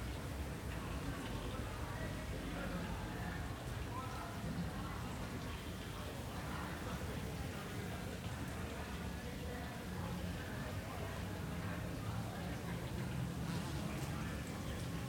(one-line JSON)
{"title": "Bissingen an der Teck, Deutschland - Bissingen an der Teck - Small square, fire service festivity", "date": "2014-08-10 14:44:00", "description": "Bissingen an der Teck - Small square, fire service festivity.\nBissingen was visited by R. M. Schafer and his team in 1975, in the course of 'Five Village Soundscapes', a research tour through Europe. So I was very curious to find out what it sounds like, now.\n[Hi-MD-recorder Sony MZ-NH900, Beyerdynamic MCE 82]", "latitude": "48.60", "longitude": "9.49", "altitude": "419", "timezone": "Europe/Berlin"}